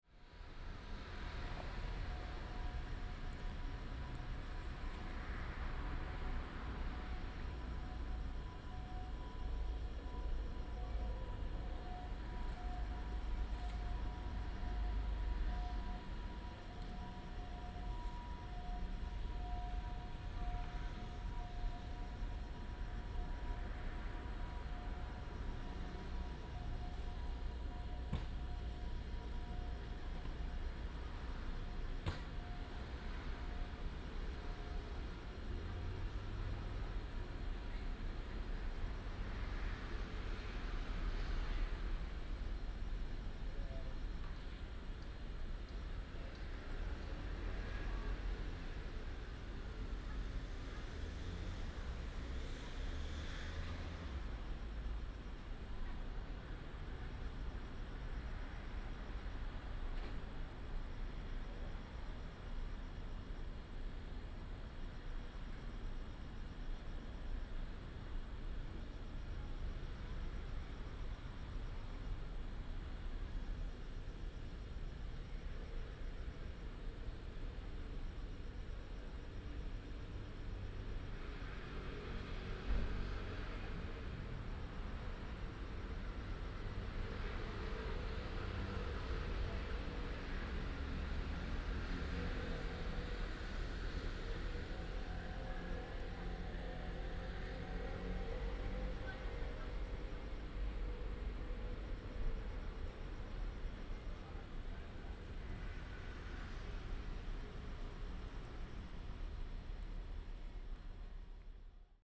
建功二路46巷, East Dist., Hsinchu City - Community small park
in the Community small park, Ambulance sound, traffic sound, Binaural recordings, Sony PCM D100+ Soundman OKM II
East District, Hsinchu City, Taiwan, 6 October 2017